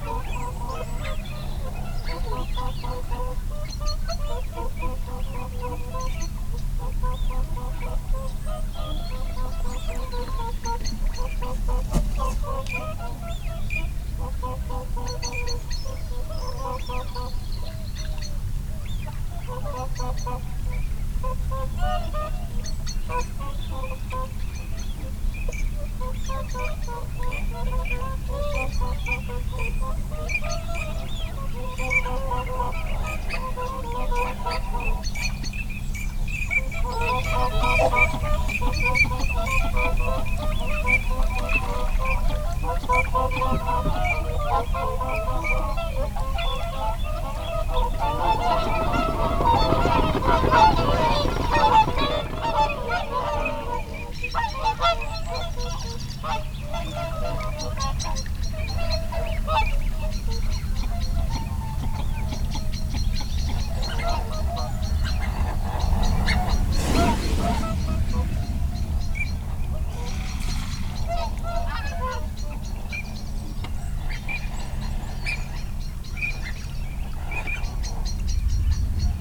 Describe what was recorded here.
teal call soundscape ... dpa 4060s clipped to bag to zoom f6 ... folly pond ... bird calls from ... whooper swan ... shoveler ... robin ... blackbird ... canada geese ... wigeon ... song thrush ... redwing ... barnacle geese ... rook ... crow ... time edited extended unattended recording ... love the wing noise from incoming birds ... possibly teal ...